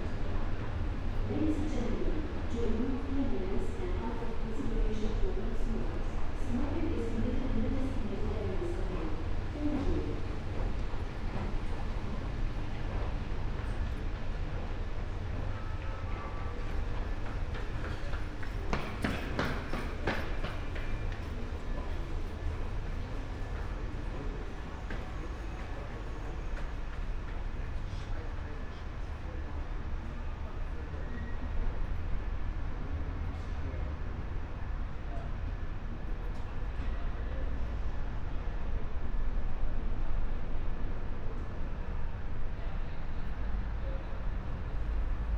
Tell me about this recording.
ideling at Mannheim Haupbahnhof, waiting for a connecting train to Salzburg, strolling around shopiing areas, pedestrian underpass and so on, (Sony PCM D50, Primo EM172)